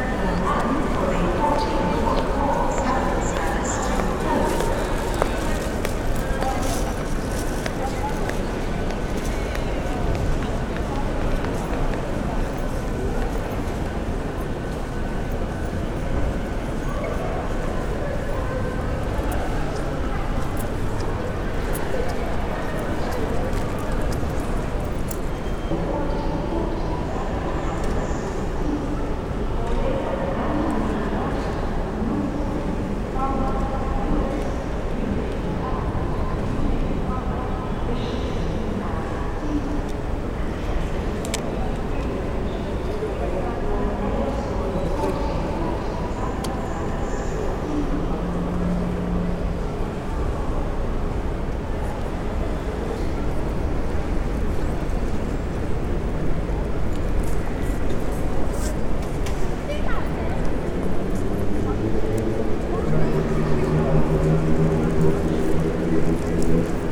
Brighton railway station concourse, atmosphere, enter through barriers and walk to the front carriage of the 14:50 London bound train on platform 4.